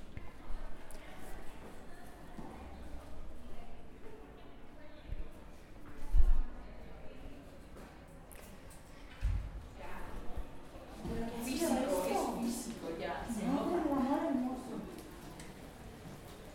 It was recorded at the main entrance of the faculty of Philosophy. It can be heard people coming through the main doors, talking while they walk inside outside the faculty.
Recorded with a Zoom H4n.
6 December 2018, ~19:00